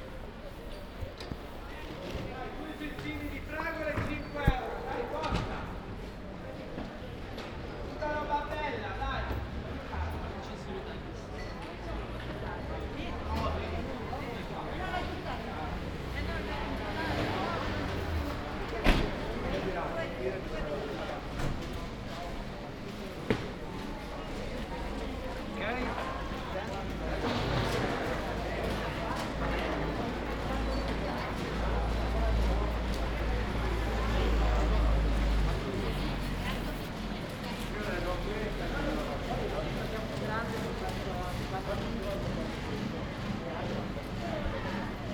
Ascolto il tuo cuore, città. I listen to your heart, city. Several chapters **SCROLL DOWN FOR ALL RECORDINGS** - Jour du Printemps au marché aux temps du COVID19 Soundwalk
"Jour du Printemps au marché aux temps du COVID19" Soundwalk
Saturday March 21th 2020. First Spring day at the Piazza Madama Cristina open market at San Salvario district, Turin.
Eleven days after emergency disposition due to the epidemic of COVID19.
Start at 10:12 a.m. end at 10:41 a.m. duration of recording 29’49”
The entire path is associated with a synchronized GPS track recorded in the (kmz, kml, gpx) files downloadable here:
Piemonte, Italia